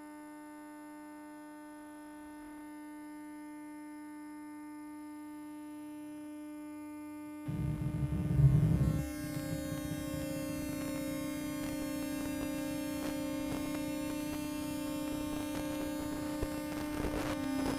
City of Brussels, Belgium - Telephone pick-up recording of Metro ride, escalator, and ticket validation machine
Listening to the Metro from Port de Namur to Arts-Loi using a very cheap telephone pick-up coil, and therefore hearing only the electromagnetic waves along this trajectory. The beeps at the end are from when I put my ticket into the ticket validating machine. Mono recording, with telephone pick up coil plugged into EDIROL R09
België - Belgique - Belgien, European Union, 23 March 2013